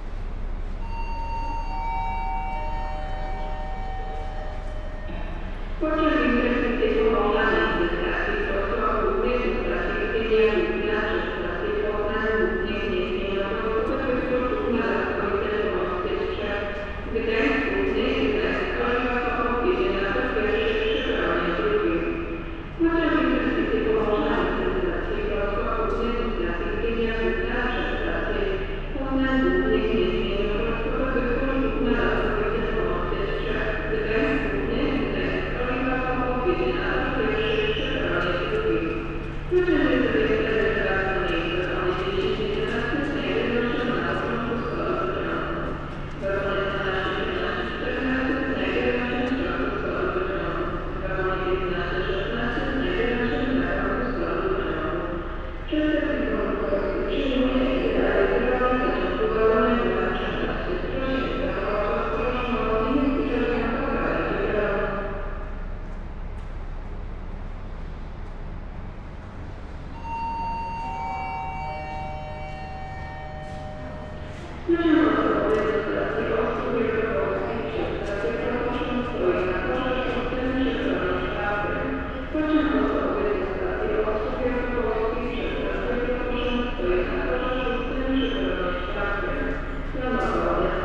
Towarowa, Leszno, Polska - Passage under Train Station Platform
A passage under Train Station Platform. Recorded with Sound Devices MixPre 6-II and Lom Usi Pro.
województwo wielkopolskie, Polska, September 2021